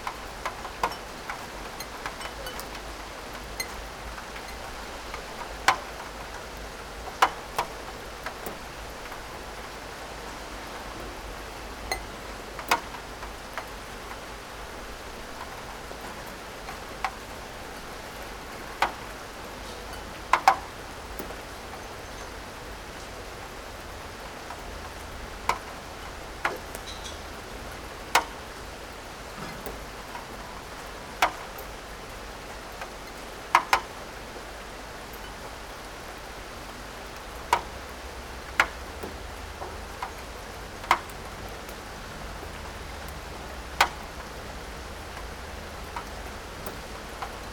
from/behind window, Mladinska, Maribor, Slovenia - raindrops onto porcelain cups, plates, shelf ...